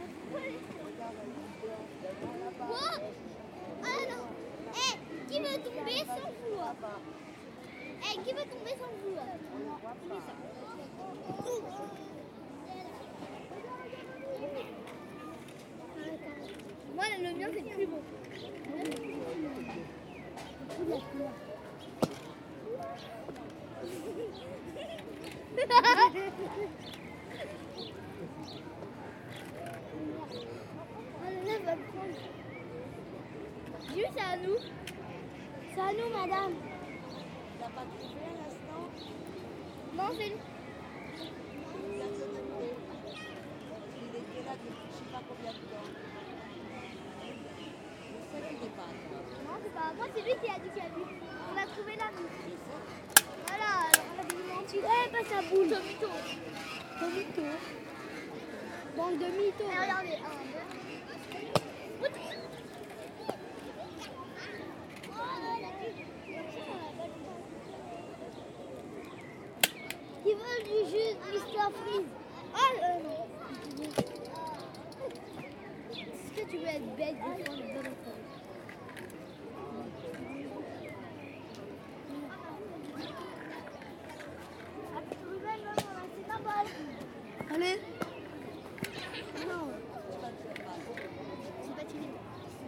Parc des Buttes-Chaumont, Paris, France - Buttes Chaumont Park [Paris]
Un samedi, Des enfants jouent avec un pistolet en plastique au parc .foule.des mister freezes.
Kids playing with a toy gun in the park.Nice Day.